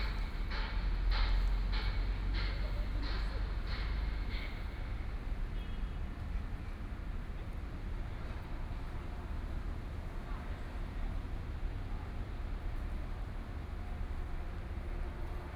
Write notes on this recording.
Traffic Sound, Binaural recordings, Zoom H6+ Soundman OKM II